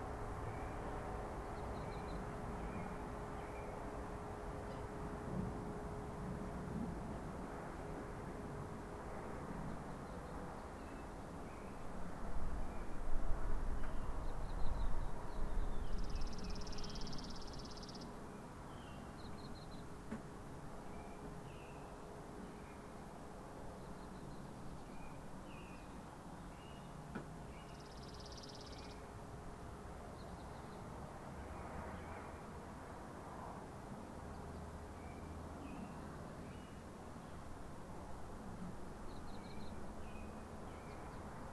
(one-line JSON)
{"title": "Glorieta, NM, so called USA - GLORIETA more fresh july", "date": "2018-07-01 10:44:00", "latitude": "35.57", "longitude": "-105.76", "altitude": "2253", "timezone": "America/Denver"}